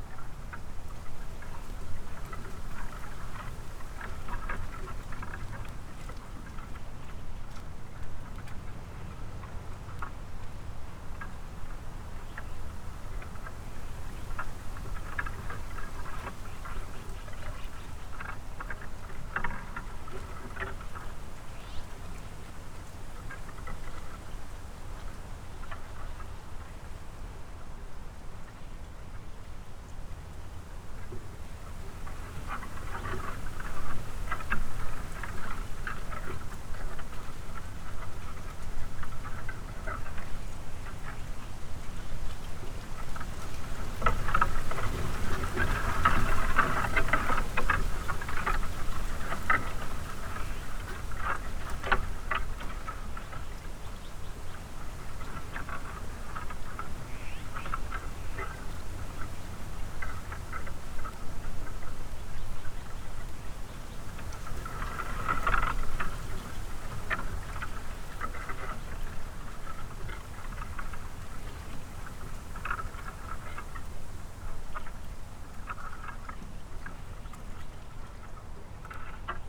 바람이 나오는 날 Gusty day in Chuncheon
바람이 나오는 날_Gusty day in Chuncheon